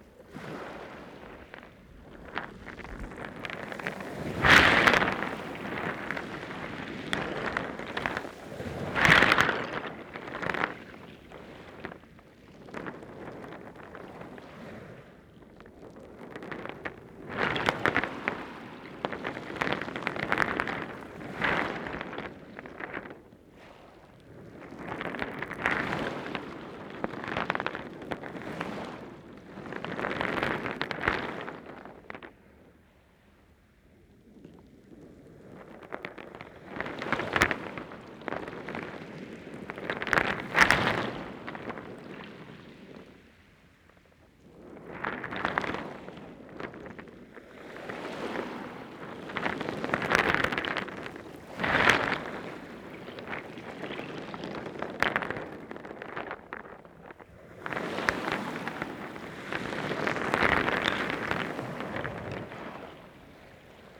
{
  "title": "High tide waves heard from under the stones, Romney Marsh, UK - High tide waves heard from under the stones",
  "date": "2021-07-24 13:15:00",
  "description": "Recorded by a hydrophone (underwater microphone) in combination with normal mics this gives an impression of how it sounds to be amongst, or under, the stones as the waves break above.",
  "latitude": "50.92",
  "longitude": "0.98",
  "timezone": "Europe/London"
}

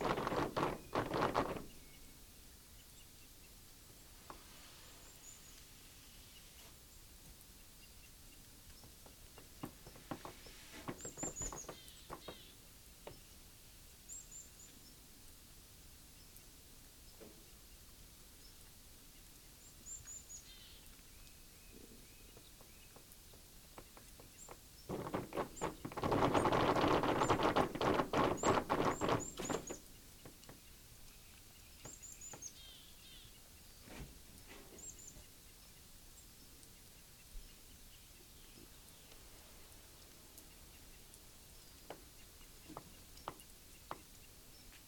{
  "title": "MXHX+JM Montcel, France - Avalanche",
  "date": "2005-04-18 10:30:00",
  "description": "Départ des pistes de ski de fond de Crolles sur le plateau du Revard, suspens près d'un toit, la neige glisse lentement, tombera, tombera pas? puis baouf!",
  "latitude": "45.68",
  "longitude": "6.00",
  "altitude": "1387",
  "timezone": "Europe/Paris"
}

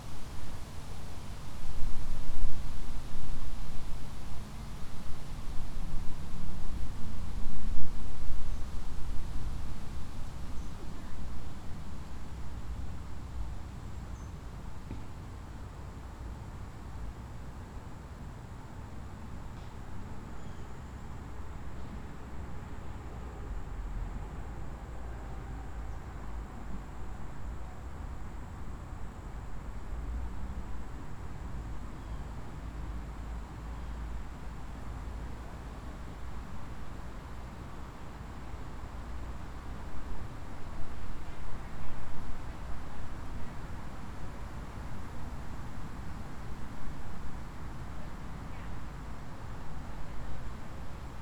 {
  "title": "Tolleson Park, McCauley Rd, Smyrna, GA, USA - Quiet Day At The Park",
  "date": "2020-09-06 15:08:00",
  "description": "The ambience of a quiet park. There were some people bumping a volleyball around in the distance, as well as some people around the public pool behind the recorder.",
  "latitude": "33.87",
  "longitude": "-84.52",
  "altitude": "307",
  "timezone": "America/New_York"
}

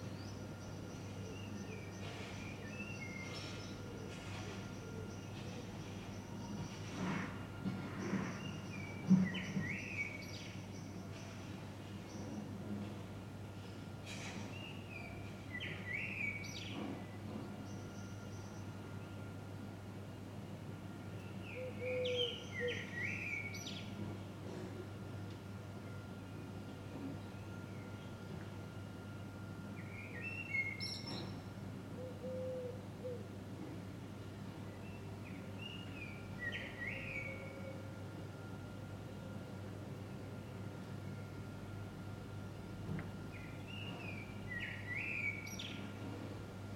{
  "title": "Lange Winkelhaakstraat, Antwerpen, Belgium - Morning ambience.",
  "date": "2022-05-19 10:15:00",
  "description": "Calm morning in Antwerpen. Bird songs, city noises, sirens wailing in the distance, air conditioning and bell sounds.\nRecorded with a Sound Devices MixPre-6 and a pair of stereo LOM Usi Pro.",
  "latitude": "51.22",
  "longitude": "4.42",
  "altitude": "9",
  "timezone": "Europe/Brussels"
}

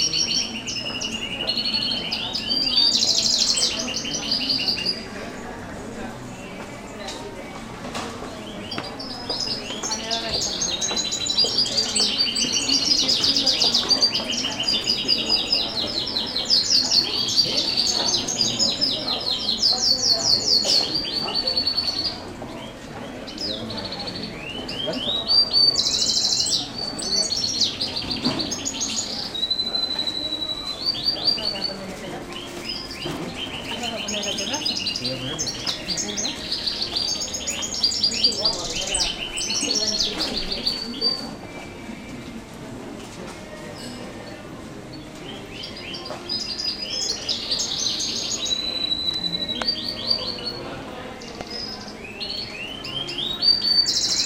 {
  "title": "Calle Pintada, Nerja - real birds and replicants",
  "date": "2007-12-06 17:30:00",
  "description": "real birds and artificial birds (the sound is coming from the gift shop perhaps) singing at the same time.",
  "latitude": "36.75",
  "longitude": "-3.88",
  "altitude": "32",
  "timezone": "Europe/Madrid"
}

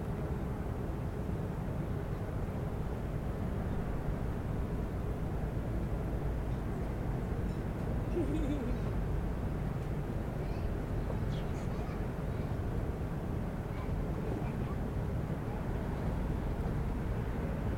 {
  "title": "Bord du lac d'Aix les Bains, Tresserve, France - Plage du Lido",
  "date": "2022-08-18 11:20:00",
  "description": "Le temps est gris, au bord du chemin lacustre réservé aux piétons et cyclistes près de la plage du Lido, bruit de la ventilation du restaurant bar, quelques baigneurs, les passants et la circulation sur la route voisine.",
  "latitude": "45.67",
  "longitude": "5.89",
  "altitude": "228",
  "timezone": "Europe/Paris"
}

